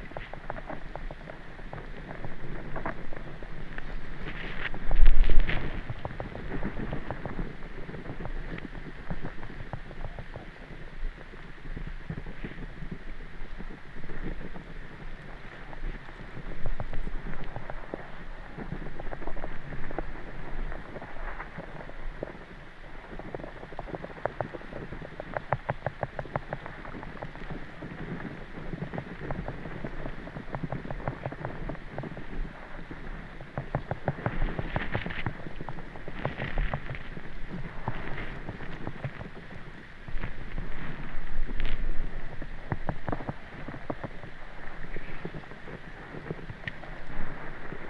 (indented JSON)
{
  "title": "Anyksciai, Lithuania, hydrophone",
  "date": "2021-11-01 15:35:00",
  "description": "River flow sound throught underwater microphones",
  "latitude": "55.50",
  "longitude": "25.07",
  "altitude": "69",
  "timezone": "Europe/Vilnius"
}